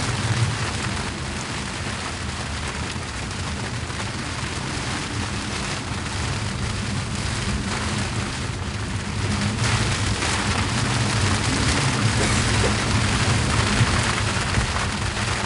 Isleornsay, Skye, Scotland, UK - Waiting Out a Storm: Anchored (Part 1)
Recorded with a stereo pair of DPA 4060s into a SoundDevices MixPre-3.